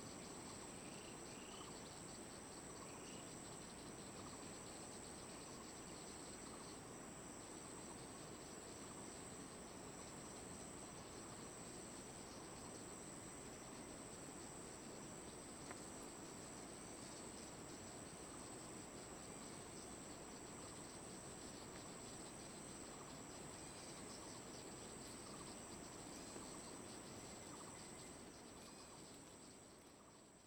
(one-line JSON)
{"title": "Liqiu, Jinfeng Township - Farmland in the Valley", "date": "2018-04-01 17:19:00", "description": "stream, New agricultural land in aboriginal, Bird call, Farmland in the Valley\nZoom H2n MS+XY", "latitude": "22.52", "longitude": "120.92", "altitude": "78", "timezone": "Asia/Taipei"}